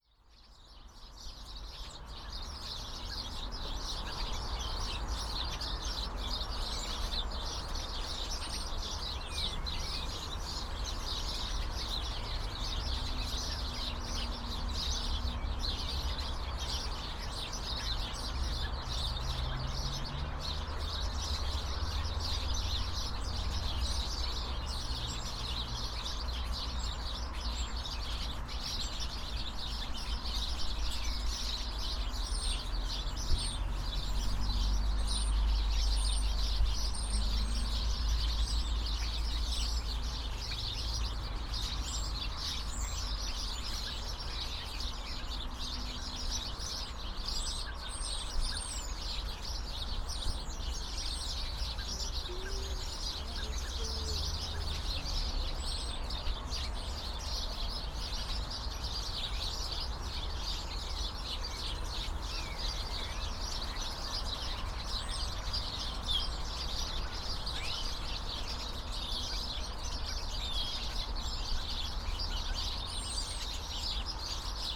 Lewes, UK - Starling Roost
A mixture of Starlings and House Sparrows can be heard in several bushes along this path chattering away as the sun starts to set. On one side of the path is the River Ouse and the other a Tesco car park.
Tascam DR-05 with wind muff wedged into bushes. Can also hear traffic on the A2029 Phoenix Causeway and a number of people walking by.
15 February 2017, 17:05